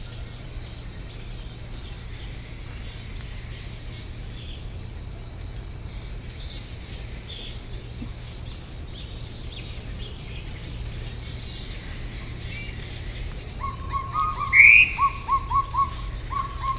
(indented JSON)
{
  "title": "金龍湖, Xizhi Dist., 新北市 - Bird calls",
  "date": "2011-07-16 06:07:00",
  "description": "Bird calls, Morning at the lakes\nBinaural recordings, Sony PCM D50",
  "latitude": "25.07",
  "longitude": "121.63",
  "altitude": "44",
  "timezone": "Asia/Taipei"
}